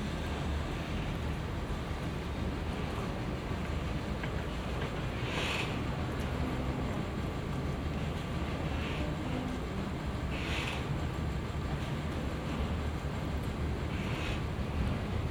Nan'an, Chongqing, Chiny - Chongqing City Orchestra
Chongqing City Orchestra. River Yangtze, barge, planes, construction site and many many sounds.
Binaural - Olympus LS-100
Chongqing Shi, China, 20 October 2016, ~14:00